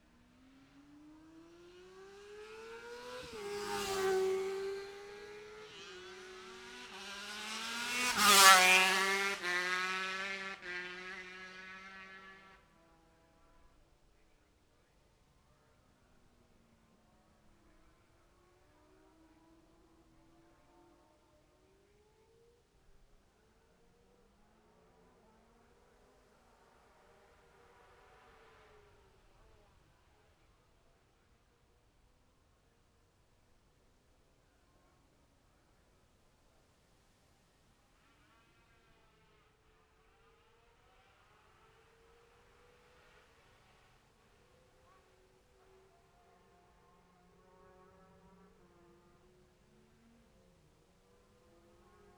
Gold Cup 2020 ... 2 & 4 strokes ... Memorial Out ... dpa 4060s to Zoom H5 clipped to bag ...
Scarborough, UK, September 2020